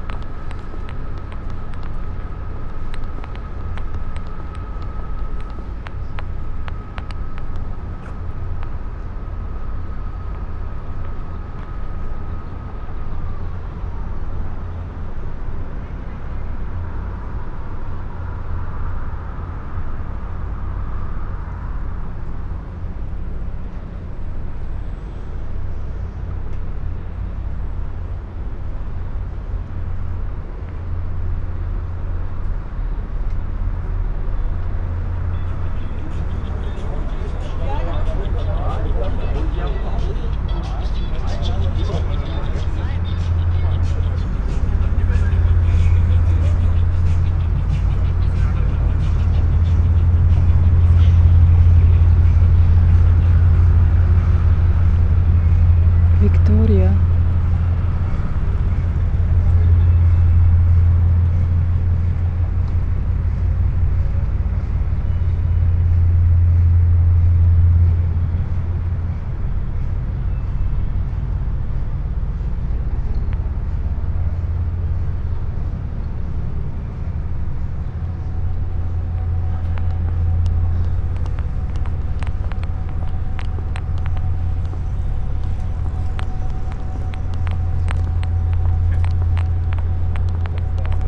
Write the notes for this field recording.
walk along almost empty streets with new building - future castle - growing on the left side, crossing the bridge, earrings and microphone wires have their own ways, Sonopoetic paths Berlin